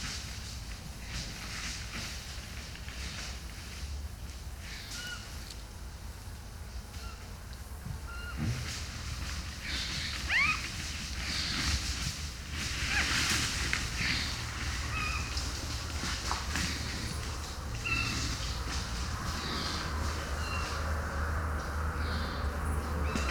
Negast forest, Waldteich, Pond, Rügen - Encounter with a boar
Mics are next to a pond. Imagine what´s going on...
Zoom F4 and diy SASS with PUI 5024